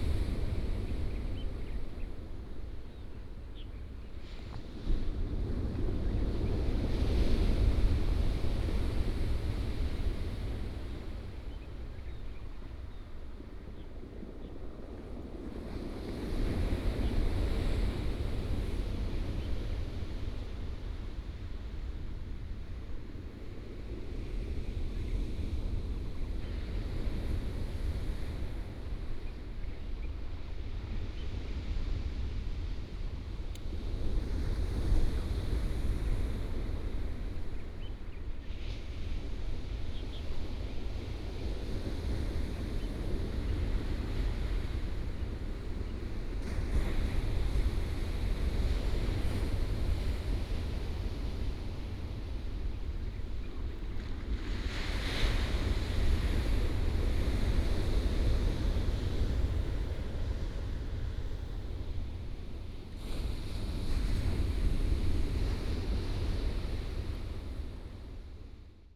Nantian Coast, 台東縣達仁鄉 - Morning on the coast
Morning on the coast, Sound of the waves, Bird call
Binaural recordings, Sony PCM D100+ Soundman OKM II